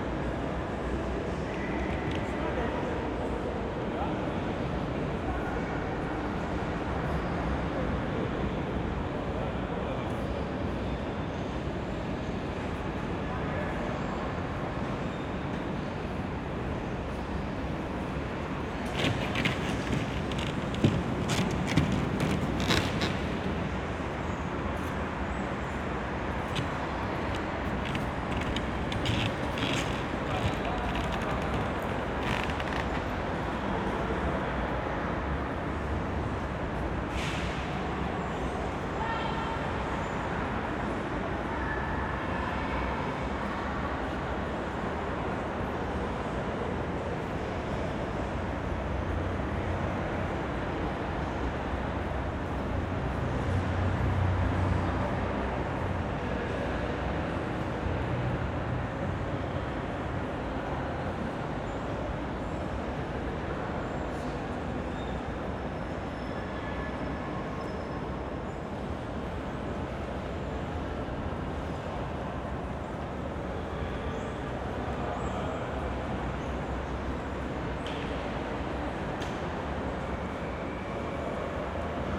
Praha, hlavní nádraží, old station hall - old station hall, steps and ambience
the cafe has disappeared, no vienna waltz here anymore. only few people are using this entrance. steps of passers-by on a piece of wood on the floor, hall ambience.
(SD702, AT BP4025)